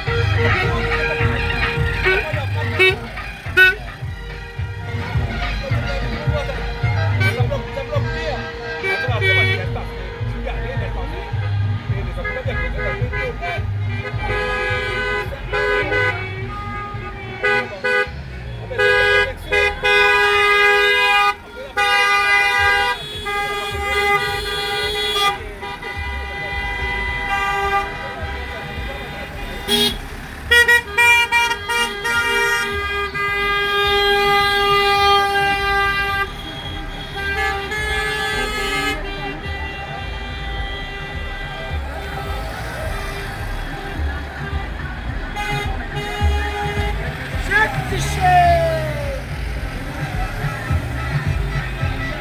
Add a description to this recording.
20200626 vers 21h passage du cortège au bord du petit lac, CILAOS